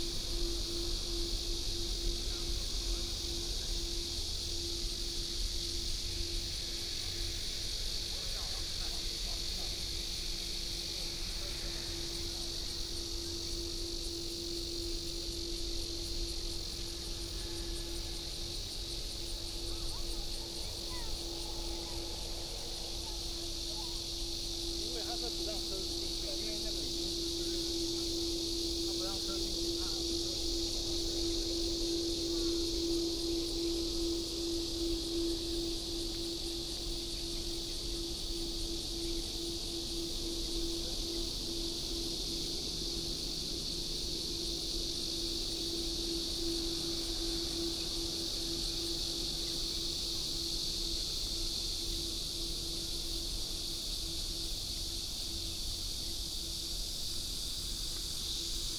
大海里, Dayuan Dist., Taoyuan City - Cicadas and the plane
Cicadas and Birds sound, Near the airport, take off, Many people are watching the plane